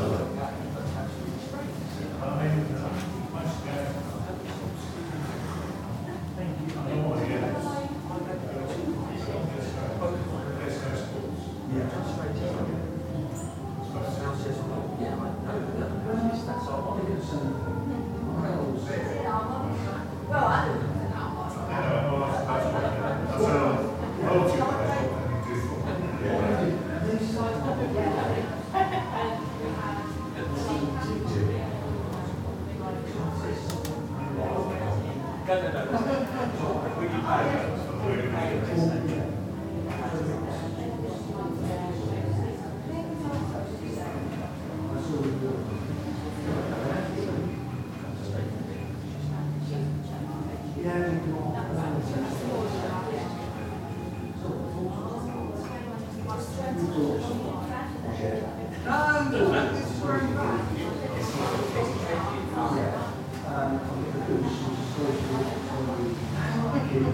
white stones cafe - White Stones Cafe - retracing Joe's footsteps around Portland
This was a destination I especially wanted to visit because fellow aporee comrade Joe Stevens made a recording here. I have a personal project that involves recording the sounds of Portland and I sought some guidance in this matter within Joe's catalogue of aporee uploads. I have added in some places of my own on this trip, but Joe's recordings have been a kind of compass, a starting point from which to enter into the sonic textures of the island. Joe was known to many in our community and sadly passed away last year... I like remembering him in the places where he went to make recordings and sitting in the same places where he went. I like to think that he also sat and drank coffee and listened to the tinny little speakers, the boomy acoustics, the traffic outside, the milk frother hissing, the change in the till at White Stones Cafe.
Portland, Dorset County, UK, 24 July 2015, 13:36